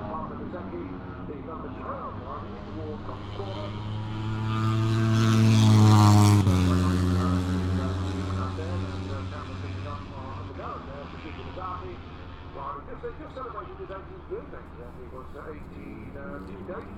Silverstone Circuit, Towcester, UK - British Motorcycle Grand Prix 2018... moto one ...
British Motorcycle Grand Prix 2018 ... moto one ... free practice one ... maggotts ... lavalier mics clipped to sandwich box ...